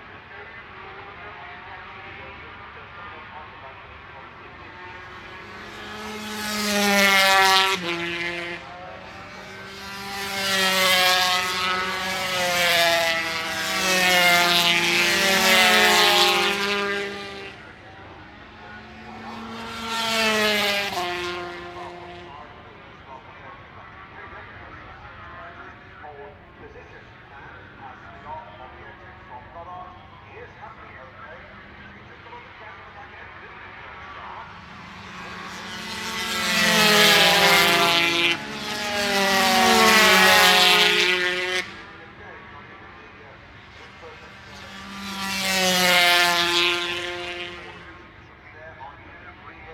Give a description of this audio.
British Motorcycle Grand Prix 2004 ... 250 warm up ... one point stereo mic to minidisk ...